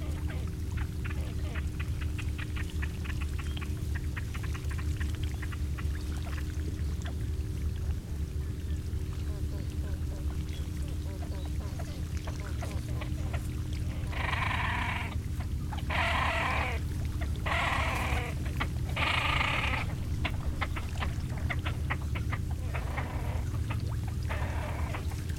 Prom. des Seines, Sévrier, France - Roselière
Au bord du lac d'Annecy, les grèbes dans la roselière des Avollions, bruits de bateaux.